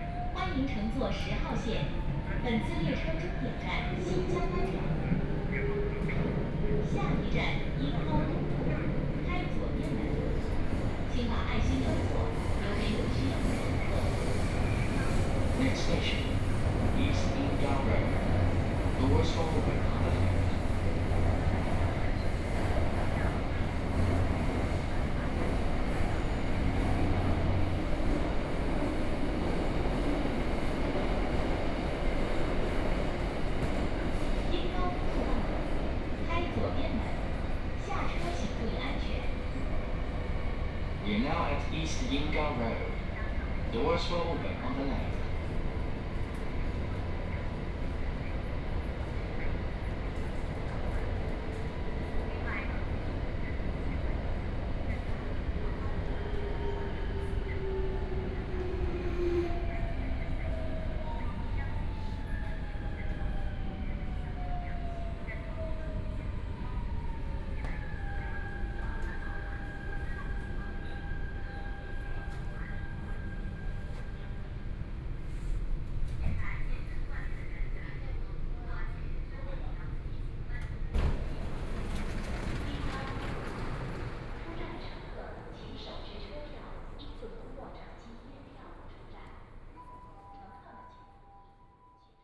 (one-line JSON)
{"title": "Yangpu District, Shanghai - Line 10 (Shanghai Metro)", "date": "2013-11-25 12:15:00", "description": "from Wujiaochang station to East Yingao Road station, Binaural recording, Zoom H6+ Soundman OKM II", "latitude": "31.31", "longitude": "121.51", "altitude": "4", "timezone": "Asia/Shanghai"}